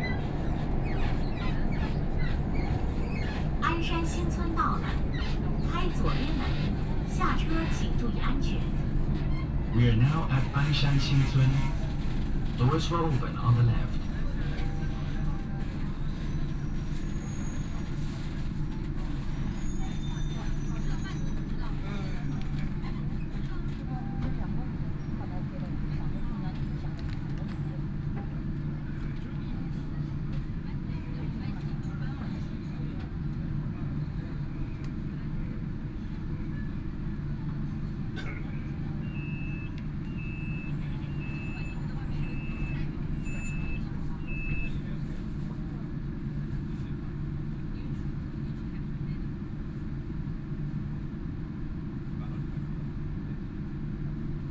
Yangpu District, Shanfhai - Line 8 (Shanghai Metro)
from Jiangpu Road station to Hongkou Football Stadium station, erhu, Binaural recording, Zoom H6+ Soundman OKM II
3 December 2013, ~13:00